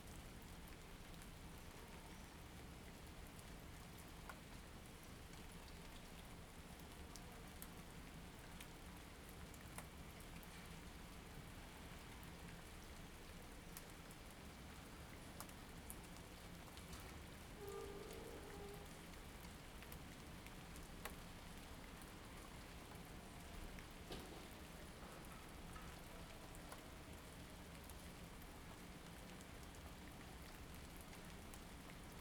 Ascolto il tuo cuore, città, I listen to your heart, city. Several chapters **SCROLL DOWN FOR ALL RECORDINGS** - Rainy Sunday with swallows in the time of COVID19 Soundscape
"Rainy Sunday with swallows in the time of COVID19" Soundscape
Chapter L of Ascolto il tuo cuore, città, I listen to your heart, city.
Sunday April 19th 2020. Fixed position on an internal terrace at San Salvario district Turin, fifty days after emergency disposition due to the epidemic of COVID19.
Start at 5:15 p.m. end at 6:15 p.m. duration of recording 01:00:00.